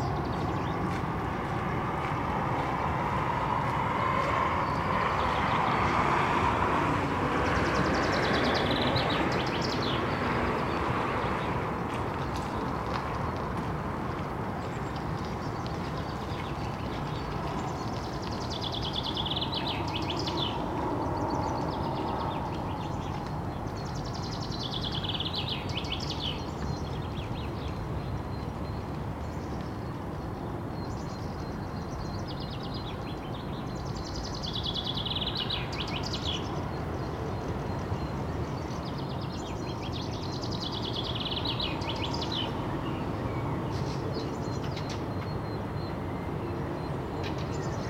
Stockholm, Årsta, spring
Beautiful morning 10AM. 4th floor
Sverige, European Union